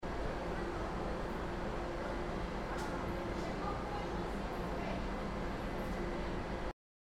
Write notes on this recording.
Som Ambiente de açougue dentro do mercado central